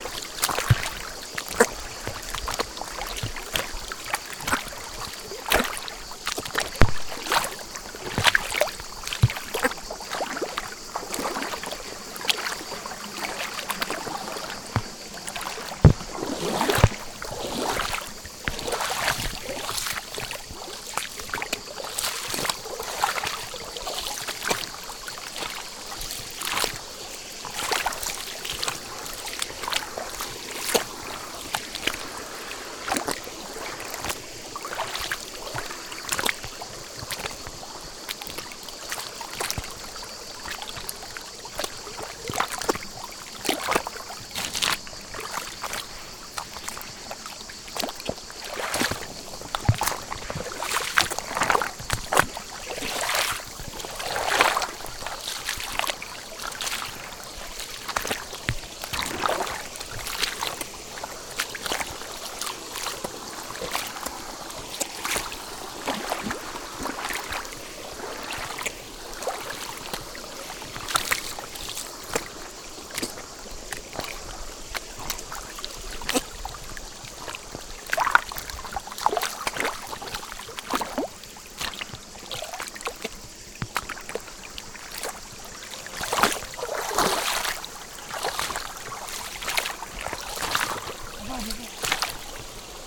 En descendant le Riou, les pieds dans l'eau
Provence-Alpes-Côte dAzur, France métropolitaine, France, 19 July 2020, ~18:00